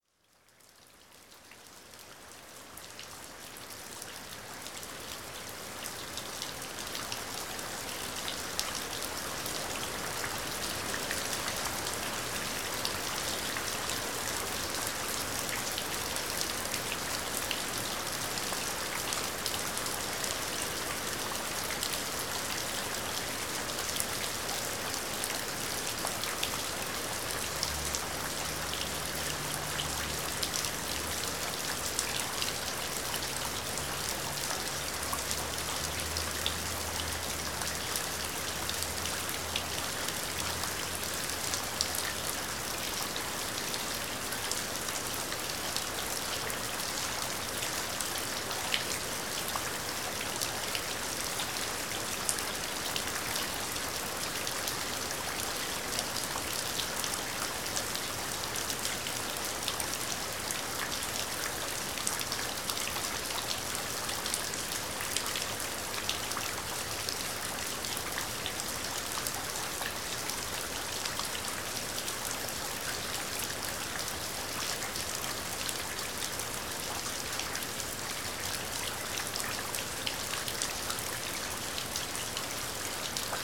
June evening rain showers, recorded in a covered section of an alley on Hamtramck's south side. I only used a Tascam DR-07 with wind screen attached to a tripod. No thunder in this one, just nice soothing rain splashing into puddles.
Hamtramck, MI, USA, 16 June 2015